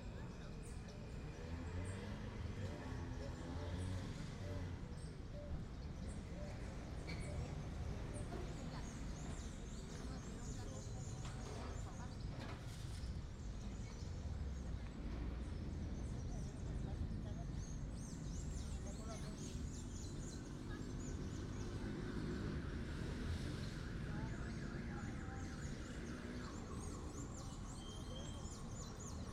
Apulo, Cundinamarca, Colombia - Apulo central park
Sound walk around the central park. Recorded the morning after the local feasts. Tense calm, asleep town. Recorded in motion with two mic capsules placed in a headphones set
4 January 2013, ~12:00